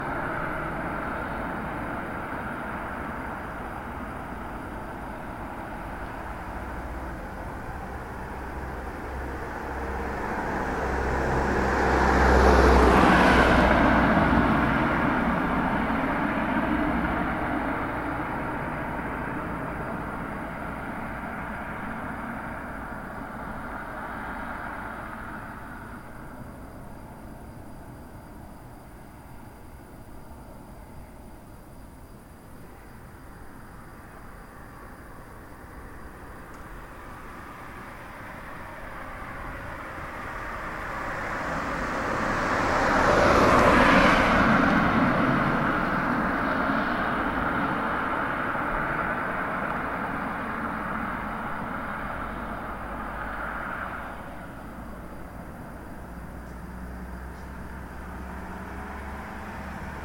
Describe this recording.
one minute for this corner: Za tremi ribniki and Terčeva ulica